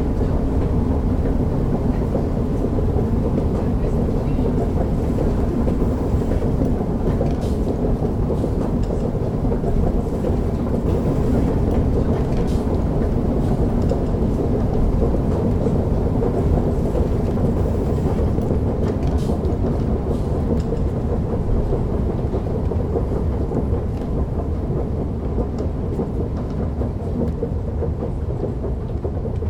inside the platform in front of the singing escalators.